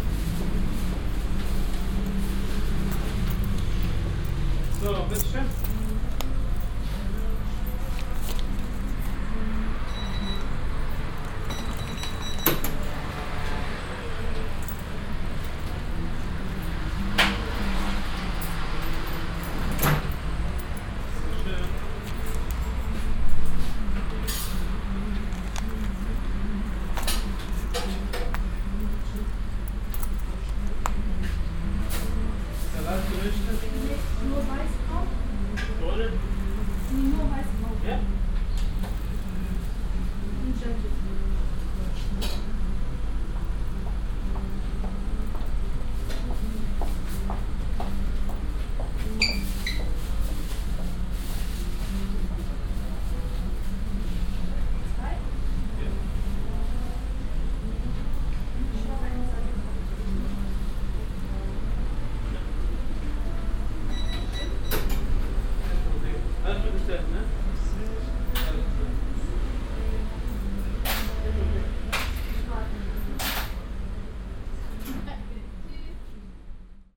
bestellungen, kassenpiepsen, der durch die offene tür eindringende strasselärm, tütenrascheln, türkische hintergrundsmusik
soundmap nrw:
projekt :resonanzen - social ambiences/ listen to the people - in & outdoor nearfield recordings